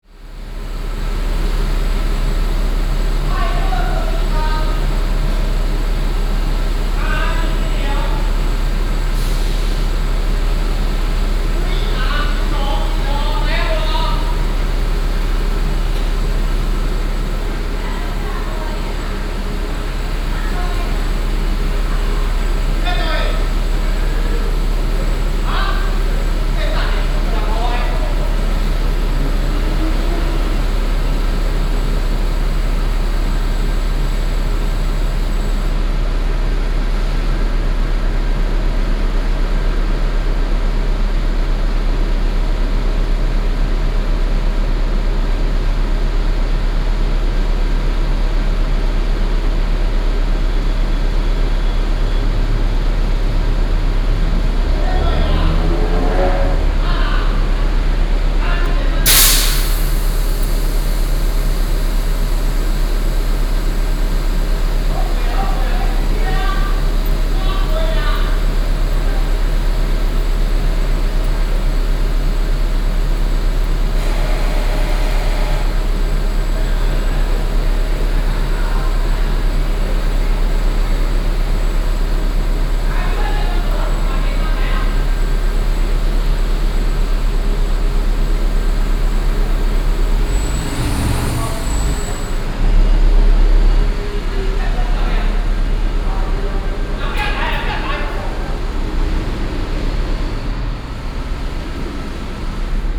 嘉義客運北港站, Beigang Township, Yunlin County - At the bus terminal
At the bus terminal, Old bus terminal, lunar New Year
Binaural recordings, Sony PCM D100+ Soundman OKM II